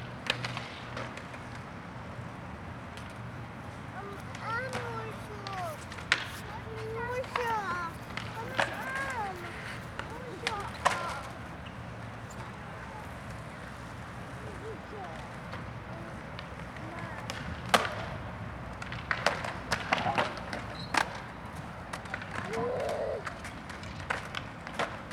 {"title": "Poznan, Mickiewicz Park, at the fountain - skateboarders", "date": "2014-03-02 15:00:00", "description": "a bunch a skateboarders doing their tricks in the empty pool of the fountain.", "latitude": "52.41", "longitude": "16.92", "altitude": "80", "timezone": "Europe/Warsaw"}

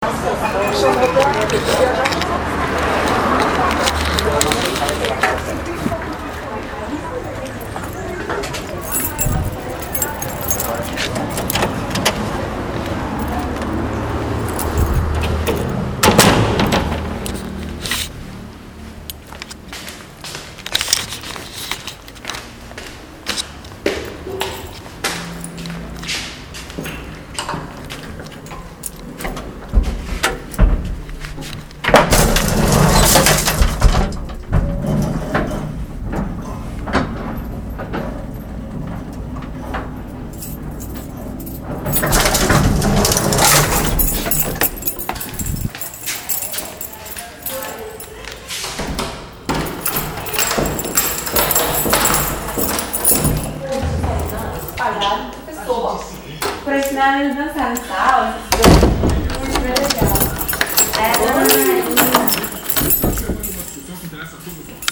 {"title": "São Domingos, Niterói - Rio de Janeiro, Brazil - Arriving at the Alpha building.", "date": "2012-11-03 18:41:00", "description": "Arriving at the Alpha building. Recorded with Sony ICD-PX312.", "latitude": "-22.90", "longitude": "-43.13", "altitude": "4", "timezone": "America/Sao_Paulo"}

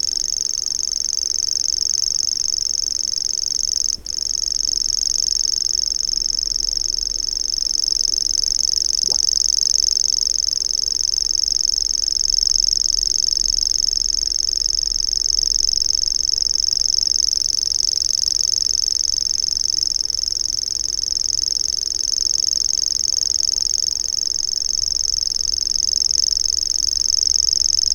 {"title": "Isle of Mull, UK - Grasshopper warbler and cyclist ...", "date": "2011-05-03 20:40:00", "description": "Grasshopper warbler and cyclist ... sat in a ditch next to a drain ... recording a grasshopper warbler ... using a parabolic ... cyclist freewheeled by ... wonder if the bird stopped 'reeling' to listen ..?", "latitude": "56.58", "longitude": "-6.19", "altitude": "4", "timezone": "Europe/London"}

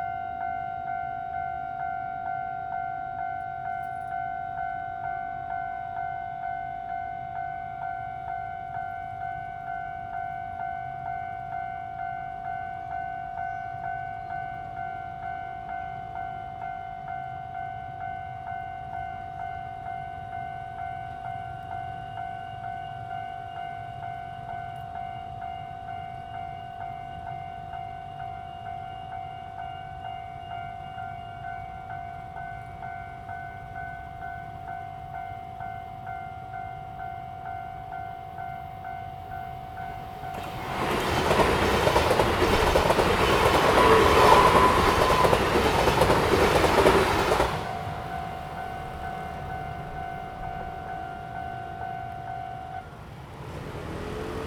{"title": "Changshun St., Changhua City - the railroad crossing", "date": "2017-02-15 15:09:00", "description": "On the railroad crossing, The train runs through, Traffic sound\nZoom H2n MS+XY", "latitude": "24.09", "longitude": "120.55", "altitude": "24", "timezone": "GMT+1"}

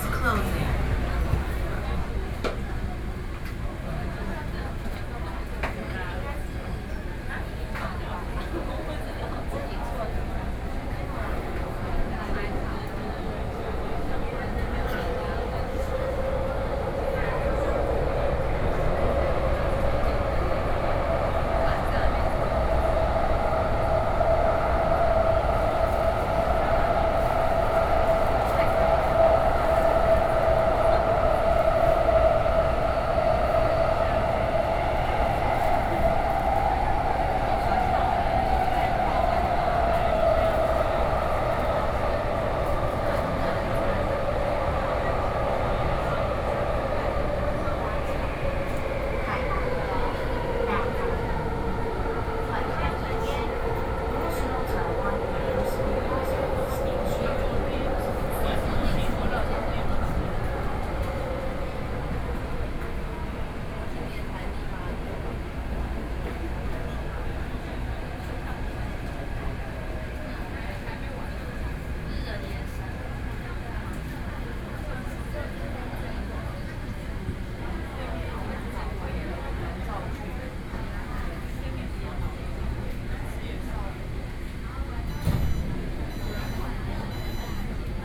{"title": "Chiang Kai-Shek Memorial Hall Station, Taipei - soundwalk", "date": "2013-06-14 21:47:00", "description": "Underpass, Mrt Stations, Sony PCM D50 + Soundman OKM II", "latitude": "25.03", "longitude": "121.52", "altitude": "6", "timezone": "Asia/Taipei"}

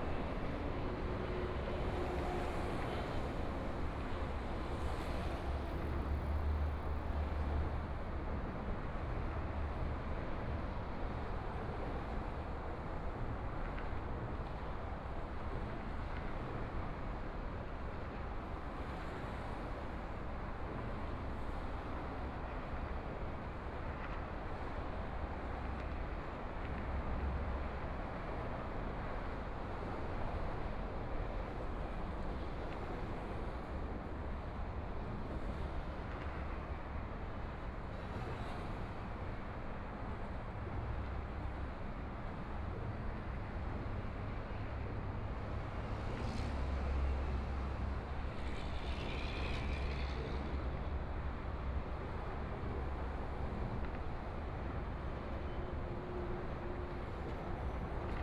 {"title": "大同區重慶里, Taipei City - Traffic Sound", "date": "2014-02-16 16:05:00", "description": "Holiday, Standing beneath the MRT tracks, Sunny mild weather, Traffic Sound, Aircraft flying through, MRT train sounds, Sound from highway\nBinaural recordings, ( Proposal to turn up the volume )\nZoom H4n+ Soundman OKM II", "latitude": "25.08", "longitude": "121.52", "timezone": "Asia/Taipei"}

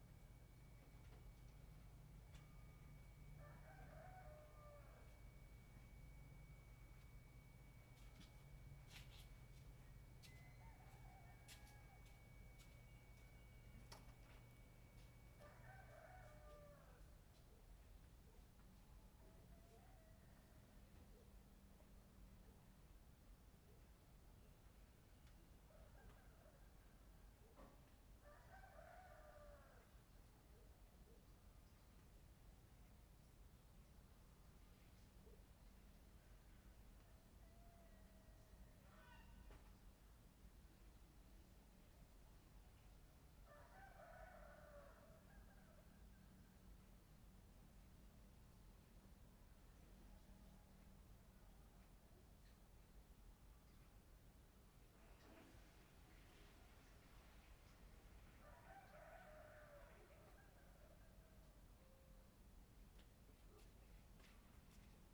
{"title": "Shueilin Township, Yunlin - Early in the morning", "date": "2014-02-01 04:15:00", "description": "On the second floor, Chicken sounds, Zoom H6 M/S", "latitude": "23.54", "longitude": "120.22", "altitude": "6", "timezone": "Asia/Taipei"}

